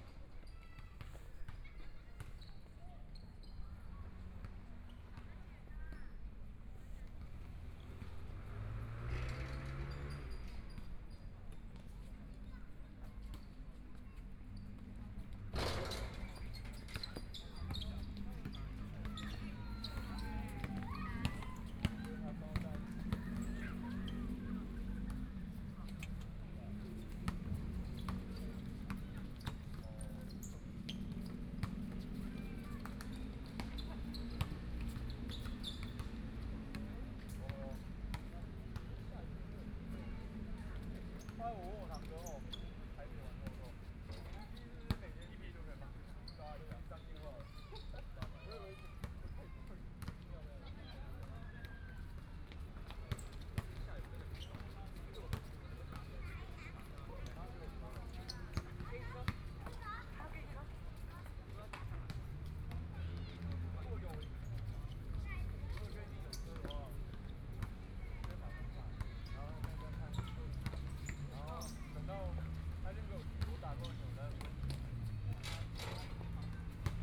PeiYing Park, Taipei City - in the Park
sitting in the Park, Children's game sound, Playing basketball voice, Traffic Sound, Binaural recordings, Zoom H4n+ Soundman OKM II